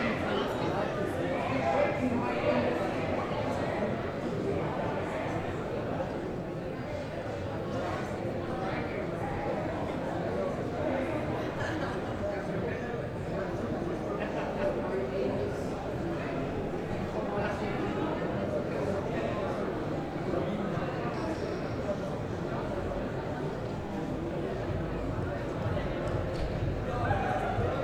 berlin: friedelstraße - the city, the country & me: people partying on the street
people partying on the street during the opening of a new bar
the city, the country & me: june 27, 2010